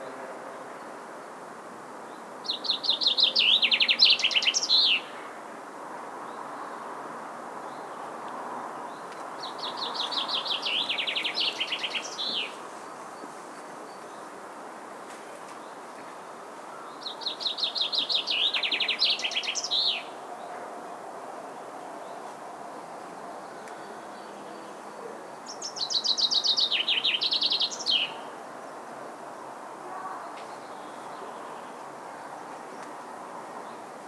tondatei.de: ottostraße köln terasse